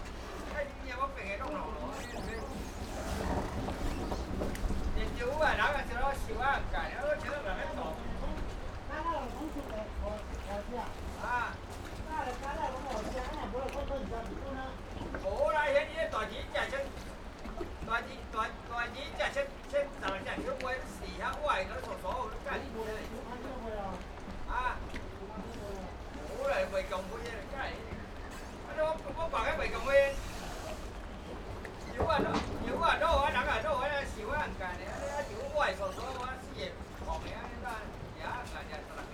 風櫃西港漁港, Penghu County - Small fishing port
Small fishing port, Small fishing village
Zoom H6 +Rode NT4